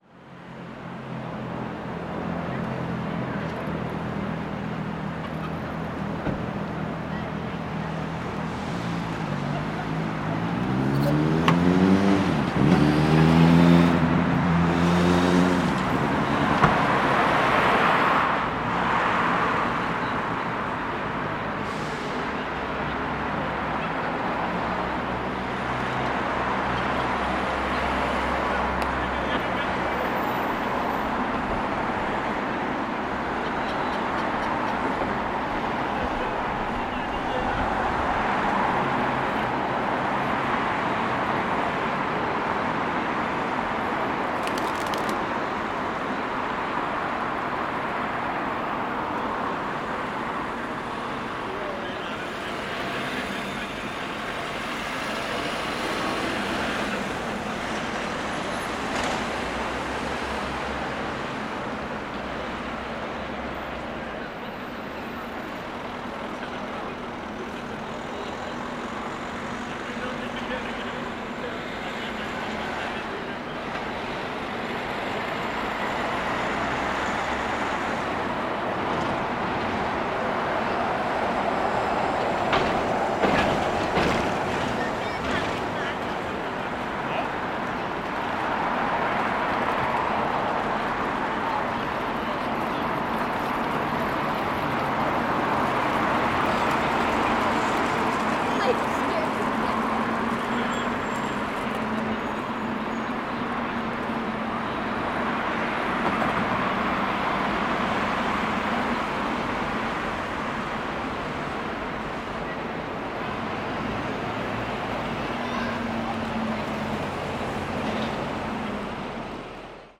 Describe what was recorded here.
Recording of birds flying and squawking, various groups chattering, different vehicles driving by, engines, baby stroller over cobblestone, kids chatting, banners flying in wind attached to a rope, car door slams.